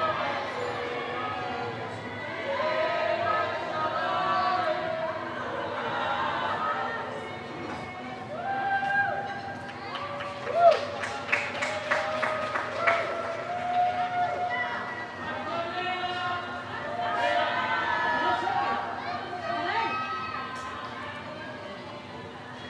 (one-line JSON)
{"title": "Iris St, Kiryat Ono, Israel - Jewish Leil Seder durnig quarantine April 2020", "date": "2020-04-08 20:30:00", "description": "Jewish Leil Seder durnig quarantine April 2020", "latitude": "32.05", "longitude": "34.86", "altitude": "68", "timezone": "Asia/Jerusalem"}